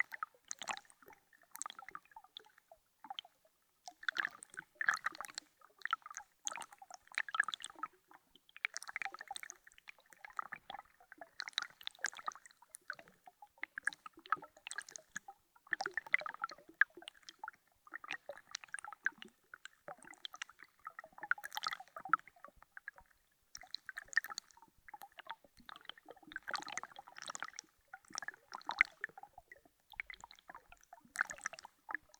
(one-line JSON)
{"title": "Lithuania, Vilnius, on a tiny ice", "date": "2013-01-30 15:05:00", "description": "contact microphones placed on a tiny ice of the river's edge", "latitude": "54.68", "longitude": "25.30", "altitude": "107", "timezone": "Europe/Vilnius"}